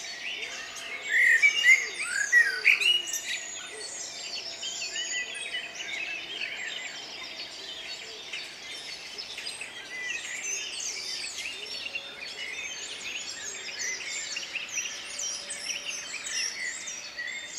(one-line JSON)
{"title": "Badegasse, Bad Berka, Deutschland - Beneath the Park Floor", "date": "2020-05-09 14:56:00", "description": "*Stereophony AB (length 365mm)\nVaried bird vocalizations, drones of cars and aircraft.\nRecording and monitoring gear: Zoom F4 Field Recorder, RODE M5 MP, AKG K 240 MkII / DT 1990 PRO.", "latitude": "50.90", "longitude": "11.29", "altitude": "275", "timezone": "Europe/Berlin"}